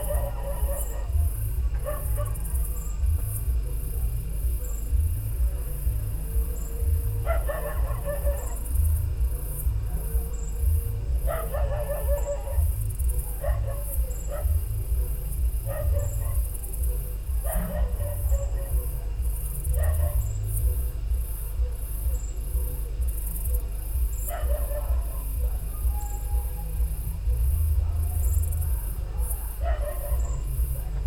Tusimpe, Binga, Zimbabwe - Binga Saturday night...
...night sounds and voices from at least two bars in the neighbourhoods…. Night birds and insects and Binga’s dogs tuning in...